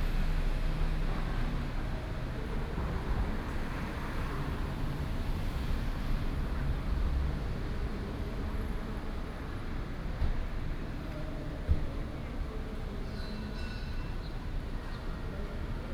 Zhongshan Rd., Central Dist., Taichung City - In the corner of the road
In the corner of the road, Traffic Sound, The old mall